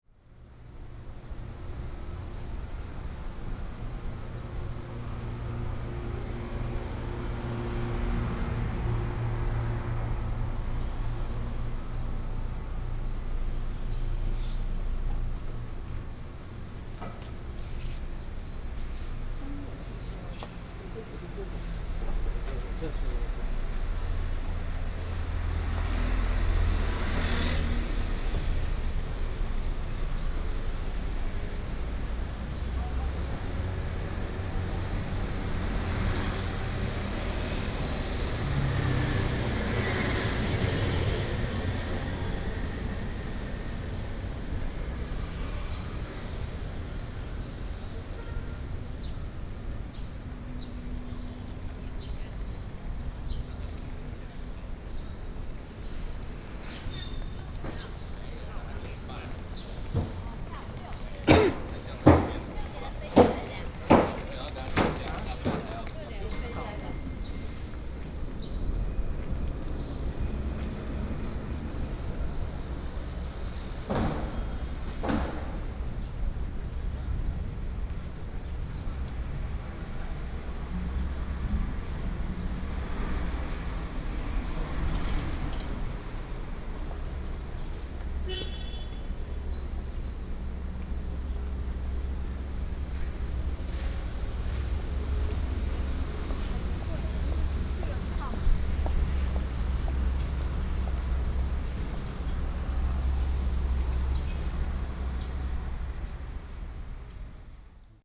Lane, Sec., Anhe Rd., Da'an Dist. - walking in the Street
walking in the Street, Very hot weather